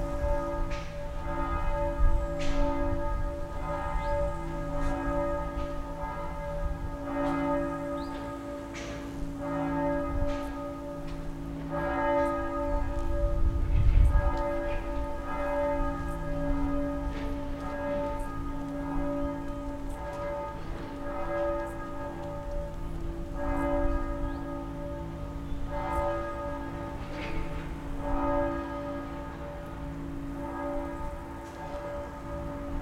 am karl-heine-kanal. vogelstimmen, passanten, bauarbeiten, mittagsglocken der nahen kirche.